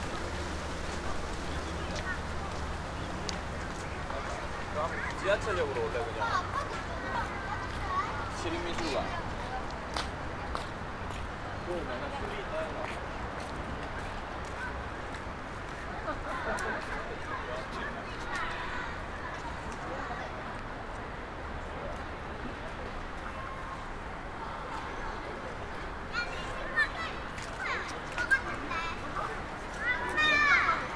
{
  "title": "Busan Museum of Modern Art 2",
  "latitude": "35.17",
  "longitude": "129.14",
  "altitude": "10",
  "timezone": "GMT+1"
}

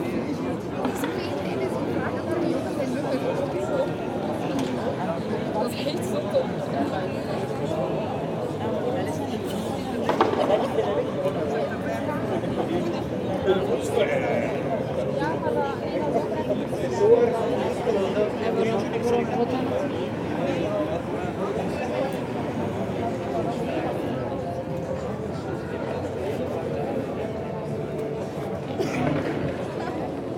{
  "title": "Dendermonde, België - Dendermonde carillon",
  "date": "2019-02-23 15:30:00",
  "description": "On the main square of the Dendermonde city, people drinking on the shiny bar terraces and at the end, the beautiful carillon ringing.",
  "latitude": "51.03",
  "longitude": "4.10",
  "altitude": "3",
  "timezone": "GMT+1"
}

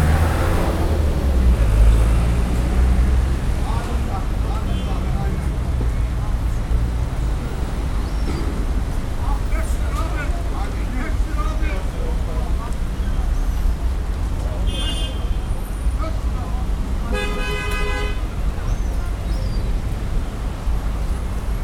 {
  "title": "Çarşı Mahallesi, Mumhane Önü Meydanı, Trabzon Merkez/Trabzon, Türkei - At the fish market",
  "date": "2017-09-22 17:51:00",
  "latitude": "41.01",
  "longitude": "39.72",
  "altitude": "11",
  "timezone": "Europe/Istanbul"
}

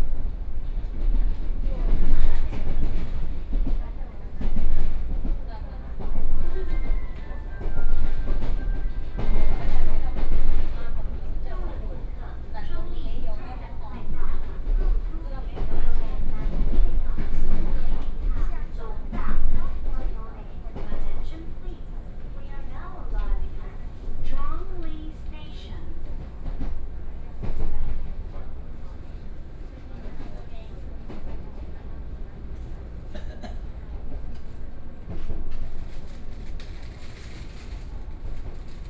{
  "title": "Jungli City, Taoyuan County - The Taiwan Railway",
  "date": "2013-08-12 13:50:00",
  "description": "Commuter rail, Sony PCM D50 + Soundman OKM II",
  "latitude": "24.97",
  "longitude": "121.25",
  "timezone": "Asia/Taipei"
}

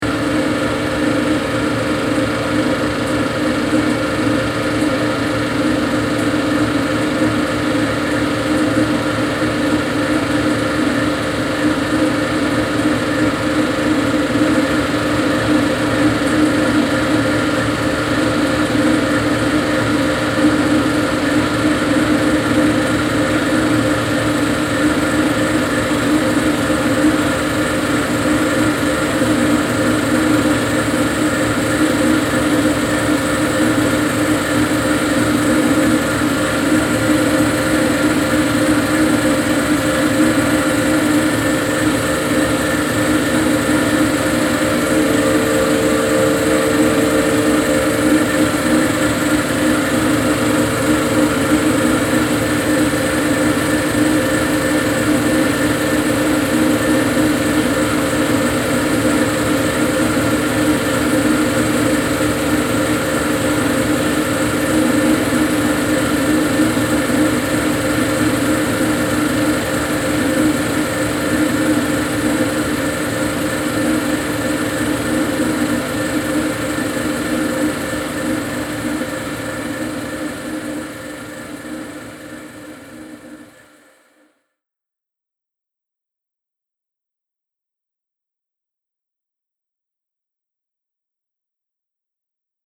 {
  "title": "Stadtkern, Essen, Deutschland - essen, lichtburg cinema, projection room",
  "date": "2014-04-11 13:15:00",
  "description": "In einem Vorführraum des Lichtburg Kinos. Der Klang des nicht mehr verwendeten 35 mm Kino Projektors.\nIn a projection room of the Lichtburg cinema. The sound of a 35mm projector.\nProjekt - Stadtklang//: Hörorte - topographic field recordings and social ambiences",
  "latitude": "51.45",
  "longitude": "7.01",
  "altitude": "87",
  "timezone": "Europe/Berlin"
}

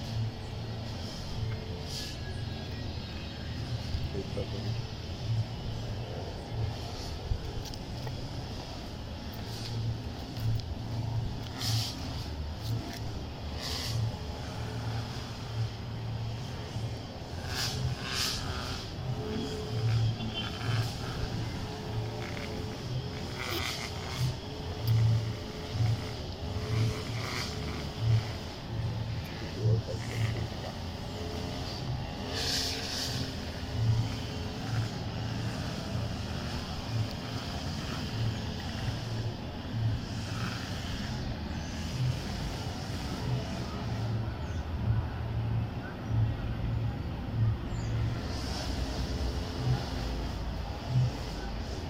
{
  "title": "Cl., Medellín, Antioquia, Colombia - Bosque",
  "date": "2021-11-07 10:30:00",
  "description": "Información Geoespacial\n(latitud: 6.257845, longitud: -75.626262)\nBosque San Cristóbal\nDescripción\nSonido Tónico: pájaros sonando, carros pasando\nSeñal Sonora: Guadaña podando\nMicrófono dinámico (celular)\nAltura: 2,00 cm\nDuración: 3:00\nLuis Miguel Henao\nDaniel Zuluaga",
  "latitude": "6.26",
  "longitude": "-75.63",
  "altitude": "1621",
  "timezone": "America/Bogota"
}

